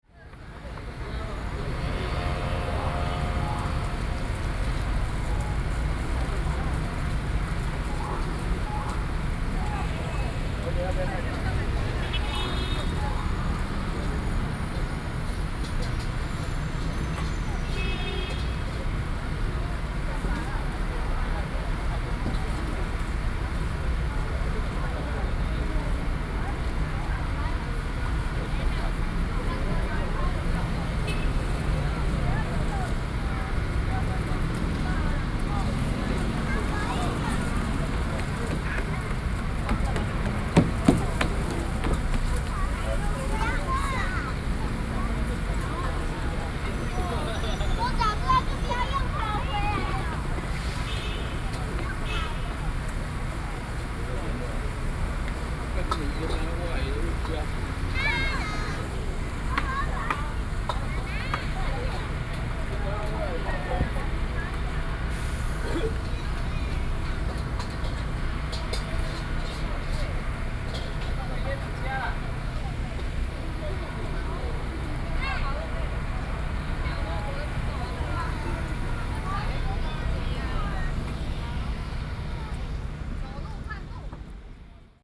in the Park, Traffic Sound
Sony PCM D50 + Soundman OKM II
New Taipei City, Taiwan, 29 June 2012